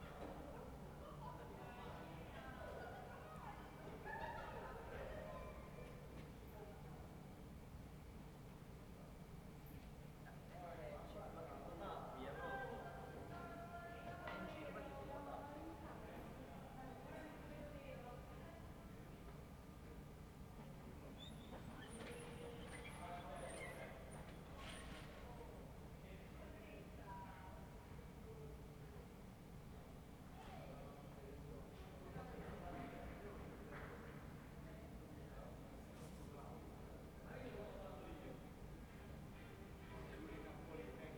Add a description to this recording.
"Round midnight at spring equinox in the time of COVID19" Soundscape, Chapter XVII of Ascolto il tuo cuore, città, I listen to your heart, city, Saturday March 21th - Sunday 22nd 2020. Fixed position on an internal terrace at San Salvario district Turin, eleven days after emergency disposition due to the epidemic of COVID19. Start at 11:38 p.m. end at OO:37 a.m. duration of recording 59'17''.